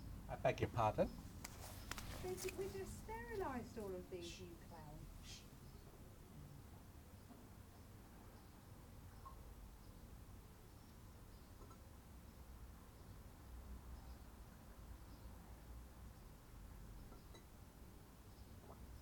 Our back garden, Katesgrove, Reading, UK - Bottling the beer
This is the sound of us bottling up a batch of beer. The first job involves sterilising all the bottles, so the bubbling sound is me filling up loads of glass bottles with sterilising solution. As soon as I heard the first bottle glugging, I thought "this is a lovely sound! I want to record it for World Listening Day!" So I went and got the recorder. You can hear some chit chat about that; then we bicker about Mark drinking extra beer out of some of the bottles to get the liquid levels right; we fiddle around with the fancy bottle-capping device. Traffic moves on the street, very slowly, you can hear the wonderful birds in our neighbourhood, mostly sparrows in this recording. All the timings are made by our work together as we sterilise the bottles, fill them with beer, cap the bottles then rinse them down. It's a batch of 30 bottles.